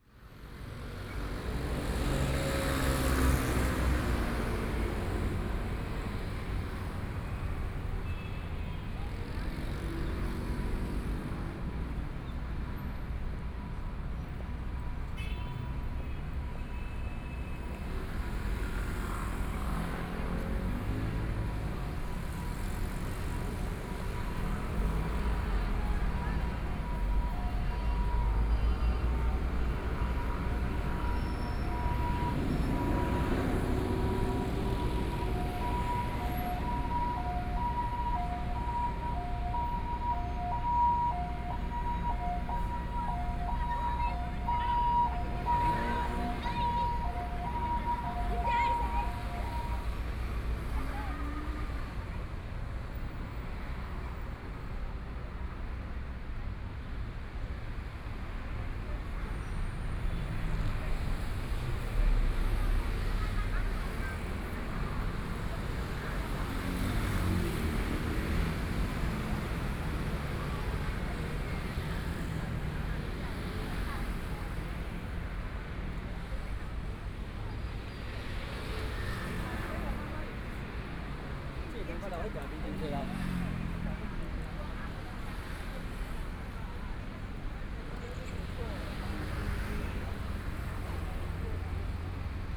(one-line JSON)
{"title": "Zhongshan N. Rd., Shilin Dist. - on the Road", "date": "2014-01-20 16:59:00", "description": "At the intersection, Traffic Sound, Ambulance sound, Binaural recordings, Zoom H4n+ Soundman OKM II", "latitude": "25.06", "longitude": "121.52", "timezone": "Asia/Taipei"}